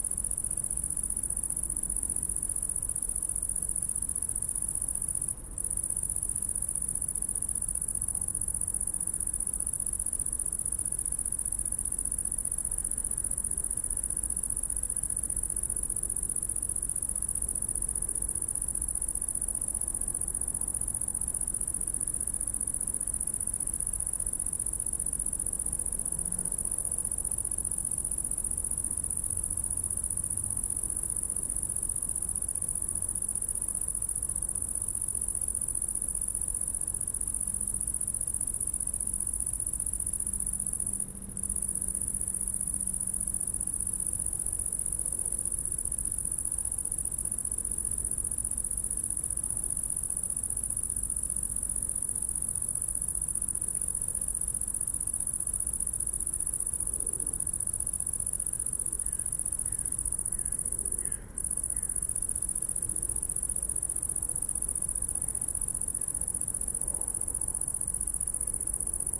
Berlin Buch, Lietzengraben - cricket and high voltage
Berlin Buch, Lietzengraben ditch, summer night, warm and humid, electric crackling from high voltage line and a cricket nearby. Autobahn noise from afar.
(Sony PCM D50, Primo EM172)
Deutschland, 14 August, ~21:00